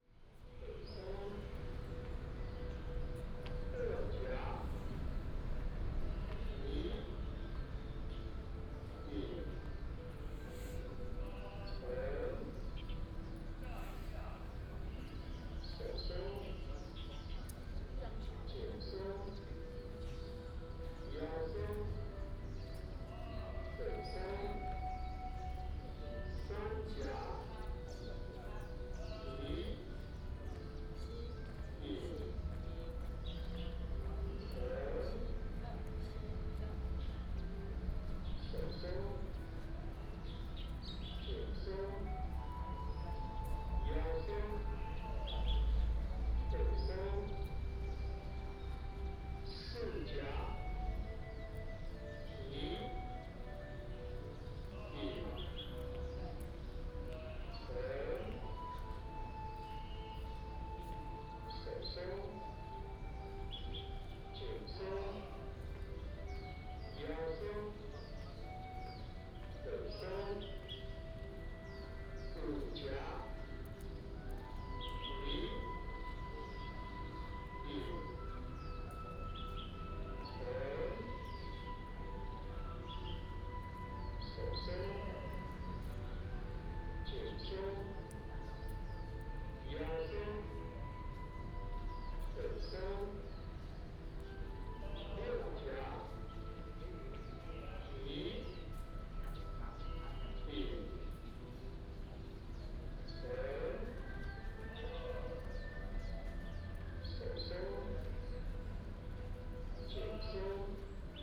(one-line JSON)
{"title": "朝陽森林公園, Taoyuan Dist., Taoyuan City - in the Park", "date": "2017-07-27 06:07:00", "description": "in the Park, traffic sound, birds sound, Many elderly people are doing aerobics", "latitude": "25.00", "longitude": "121.31", "altitude": "91", "timezone": "Asia/Taipei"}